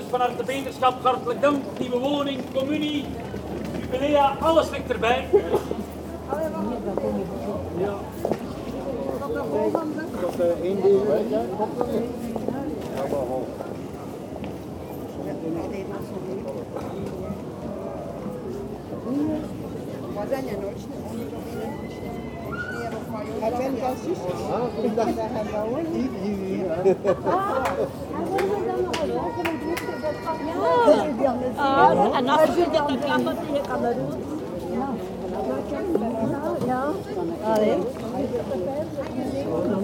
Grote Markt, Nieuwstraat, Hopmarkt. Long walk in the local market, taking place on Saturday morning. At several times, we hear the elderly talking to each other.